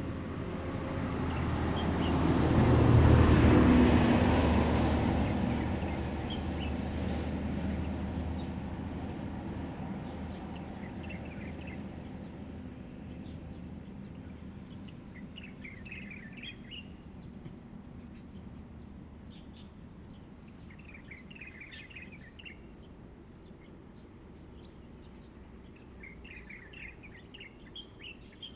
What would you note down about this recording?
Birds, In a small park, Traffic Sound, The weather is very hot, Zoom H2n MS+XY